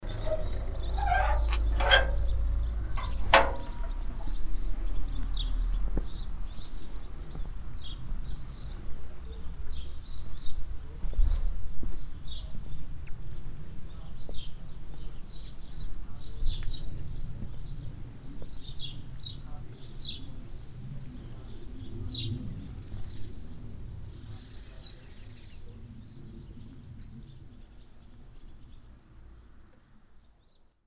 munshausen, cementery, door
Opening the queeky door to the local cementary and walking on the sandy ground.
Munshausen, Friedhofstor
Öffnen der quietschenden Tür des lokalen Friedhofs und Laufen auf dem sandigen Boden.
Munshausen, portail du cimetière
Le portail du cimetière du village qui grince en s’ouvrant et des pas sur le sol sablonneux.
Project - Klangraum Our - topographic field recordings, sound objects and social ambiences
Munshausen, Luxembourg, 12 July 2011